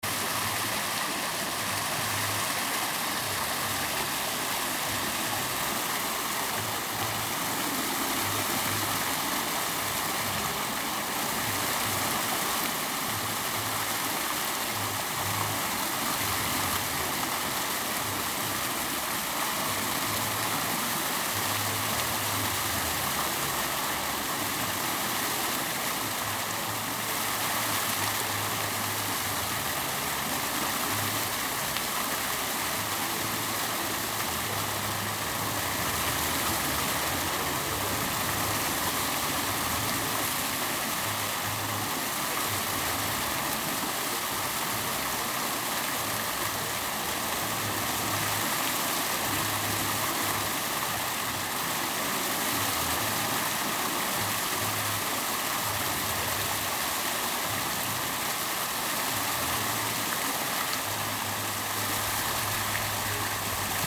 Centrum, Białystok, Polska - fontanna-Ratusz
fontanna przy Ratuszu, Rynek Kościuszki